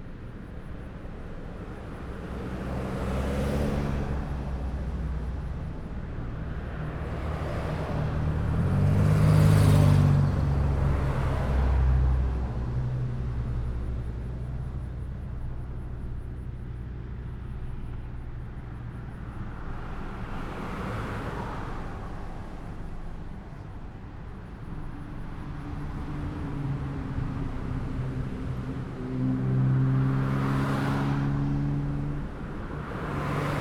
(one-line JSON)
{"title": "neoscenes: pull-out on 17", "date": "2010-08-05 23:14:00", "latitude": "37.16", "longitude": "-121.99", "altitude": "379", "timezone": "America/Los_Angeles"}